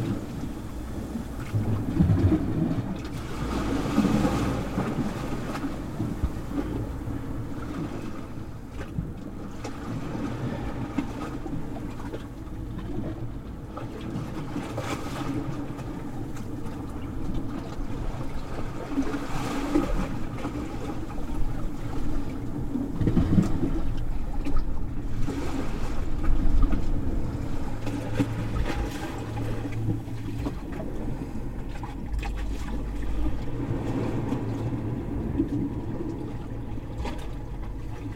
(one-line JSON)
{"title": "Fleury, France - Saint-Pierre-la-Mer", "date": "2021-12-26 15:50:00", "description": "recording in the rocks ( Saint-Pierre-La-Mer", "latitude": "43.18", "longitude": "3.19", "timezone": "Europe/Paris"}